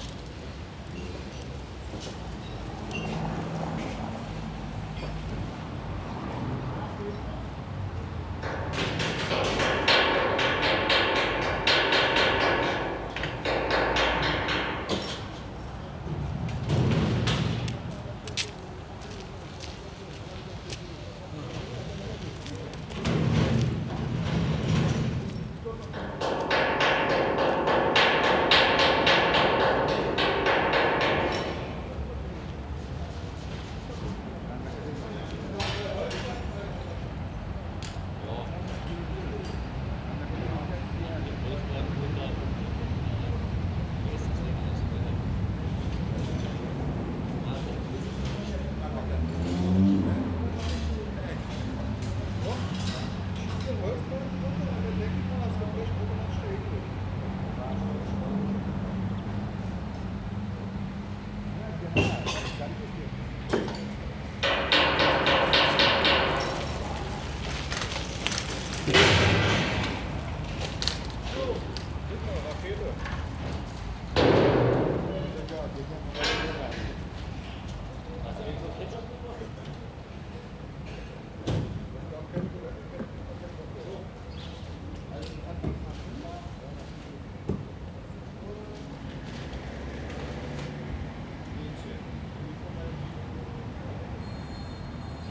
Koloniestraße, Berlin, Deutschland - Koloniestraße, Berlin - hammering and flame-cutter on the scrapyard, passers-by
Koloniestraße, Berlin - hammering and flame-cutter on the scrapyard, passers-by. Some use this narrow path as a shortcut between Koloniestraße and Holzstraße. Besides the workers on the scrapyard you can also hear some men being picked up from the nearby mosque after the mass.
[I used the Hi-MD-recorder Sony MZ-NH900 with external microphone Beyerdynamic MCE 82]
Koloniestraße, Berlin - Hämmern und Schweißen auf dem Schrottplatz, Passanten. Manche benutzen diesen schmalen Weg als Abkürzung zwischen der Kolonie- und der Holzstraße. Abgesehen von den Arbeitern auf dem Schrottplatz kann man auch einige der Männer hören, die sich vor der nahen Moschee abholen lassen, nachdem die Messe gerade zuende ist.
[Aufgenommen mit Hi-MD-recorder Sony MZ-NH900 und externem Mikrophon Beyerdynamic MCE 82]
Berlin, Germany, 12 October